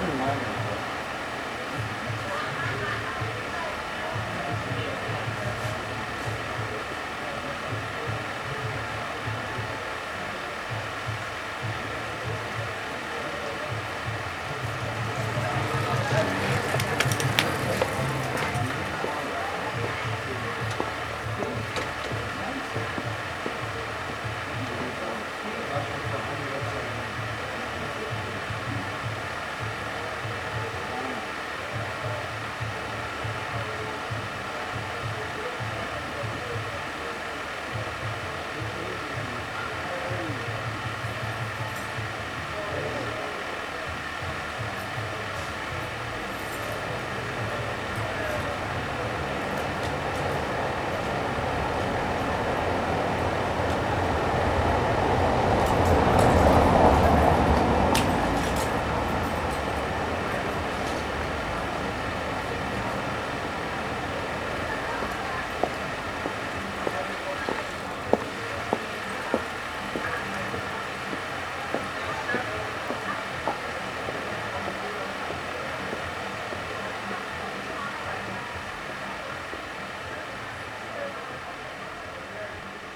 berlin, friedelstraße: vor kulturverein kinski - the city, the country & me: ventilation of kinski bar
ventilation of kinski bar
the city, the country & me: october 27, 2012
Berlin, Germany, 27 October 2012, 03:12